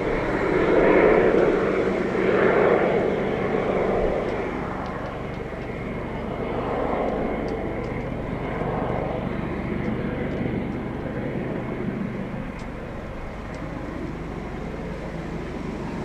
coastguard heliocopter flies overhead - UR always going to be cooler by the sea
sounds of the seaside